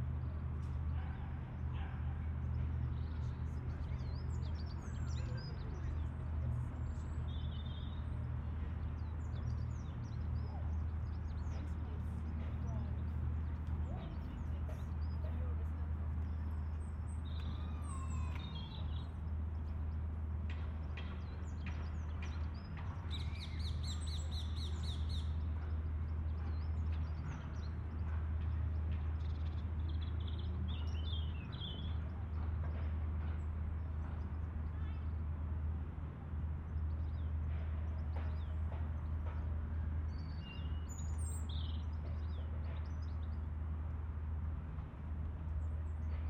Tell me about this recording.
Recorded at 17:30, Binaural Recording into a Zoom H4n, a sunny-ish day, the beginning of spring. Unedited as i wanted to capture the sound as is without extra processing/editing. I walk through the park everyday to work and think there is a good collage of sounds within and around the park.